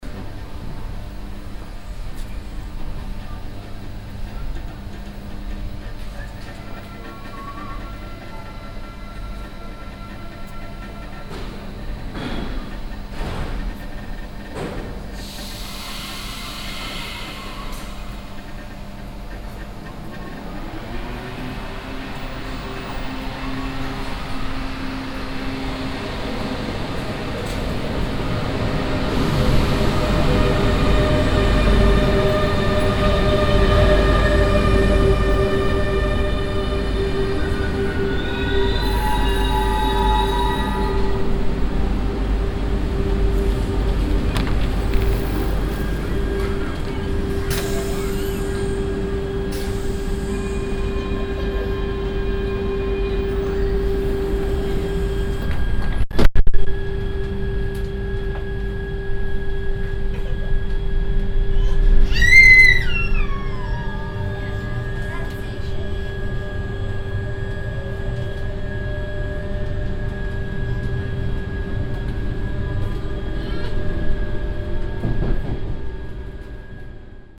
paris, noisiel, rer station
at the platform of the station, the arrival of a train - the opening of the waggon doors
Noisiel, France